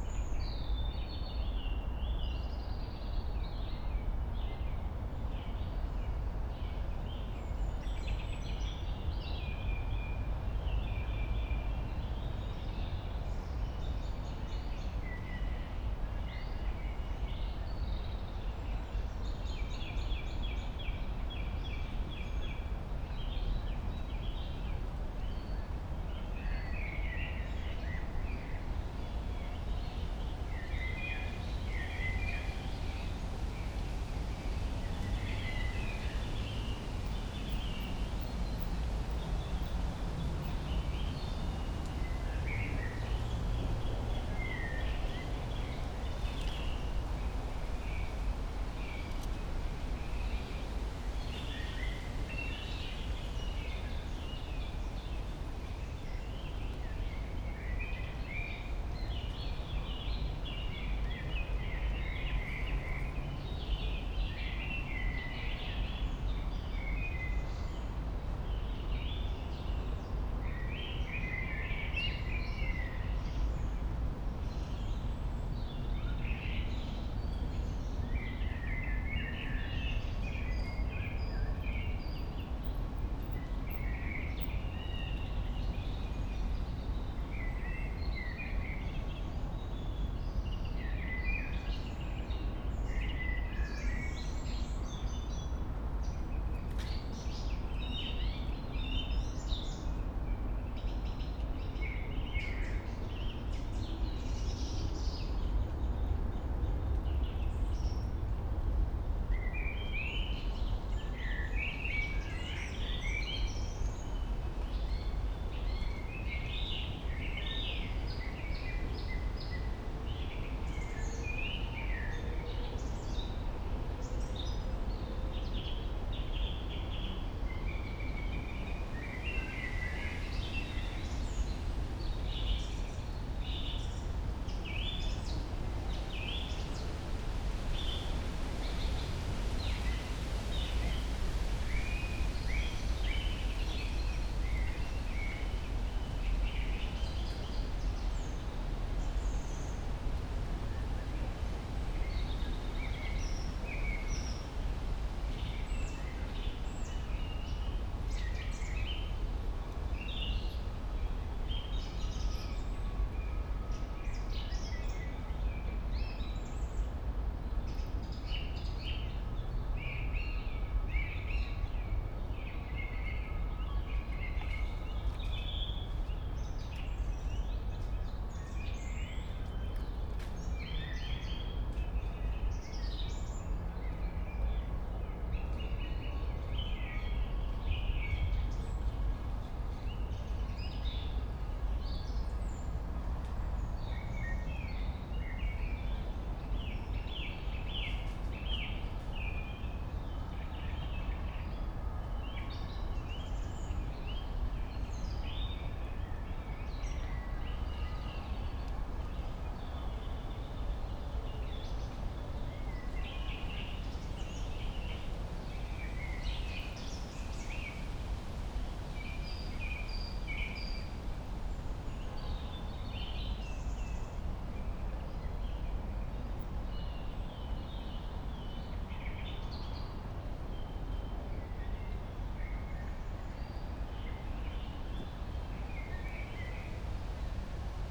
Berlin, Königsheide, Teich - city forest evening ambience
Berlin, Königsheide, city forest, favourite place in reach, just a few quiet moments, as quiet as the distant city allows... nothing special happens.
(SD702, MKH8020)